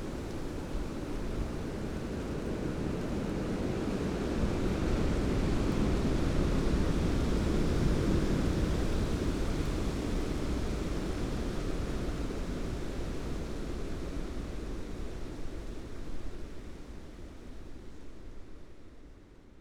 stormy afternoon, squeaking tree, some rain
the city, the country & me: january 2, 2015